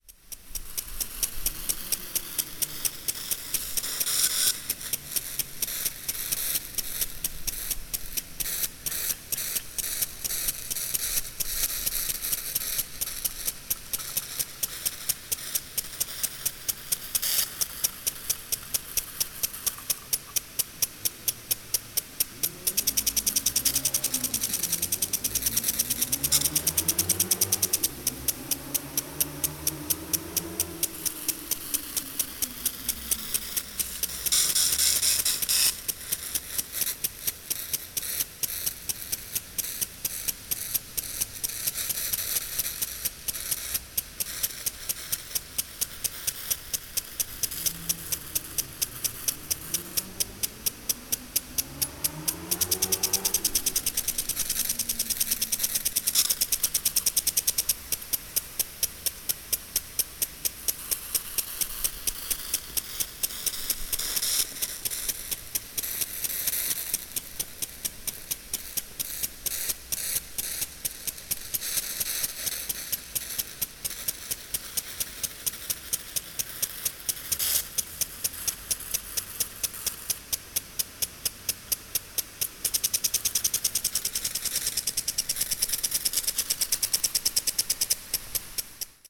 Paris, FR, Parc des Buttes-Chaumont - Lawn sprinkler
Parc de Buttes-Chaumont, lawn sprinkler - TASCAM DR-2d, internal mics